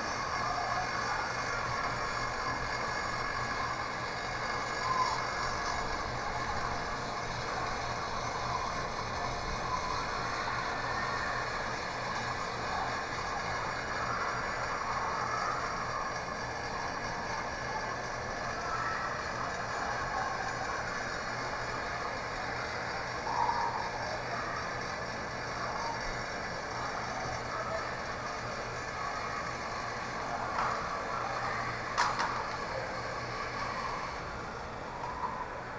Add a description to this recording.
Near the amusement park called Walibi, you can hear the children playing loudly.